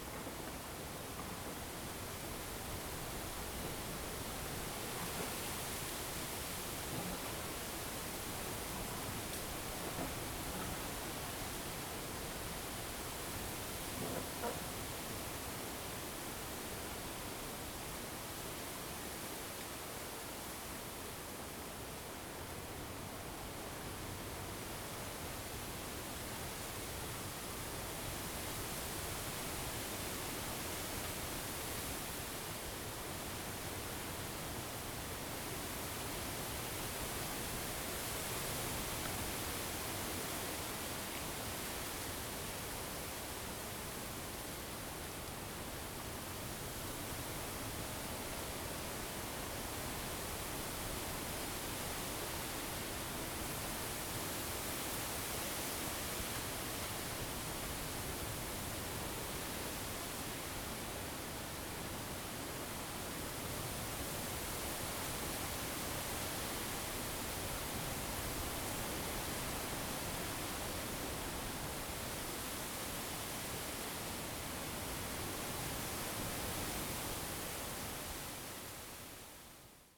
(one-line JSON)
{"title": "奎輝里 田尾, Fuxing Dist. - Close to bamboo", "date": "2017-08-14 14:56:00", "description": "Close to bamboo, wind\nZoom H2n MS+XY", "latitude": "24.80", "longitude": "121.33", "altitude": "353", "timezone": "Asia/Taipei"}